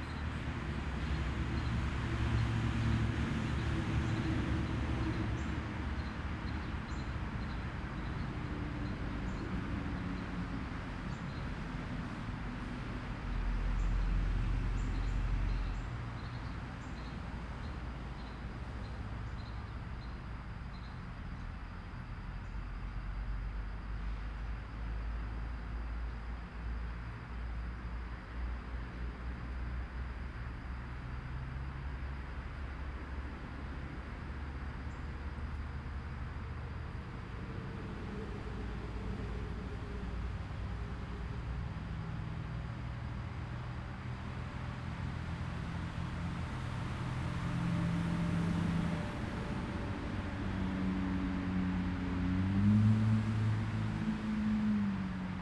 Titusville, Hopewell Township, NJ, USA - Radio aporee
This was recorded by Washington's crossing on the Delaware river.